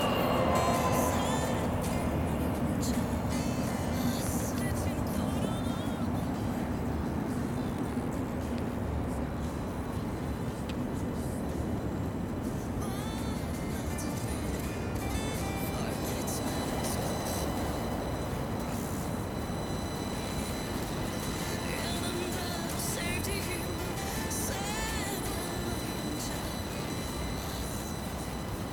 I was trying to record some trains but I've catched a radio station. So, it's a mix of different realities : electromagnetic waves, synthetic voice, field recording, music, trains passing by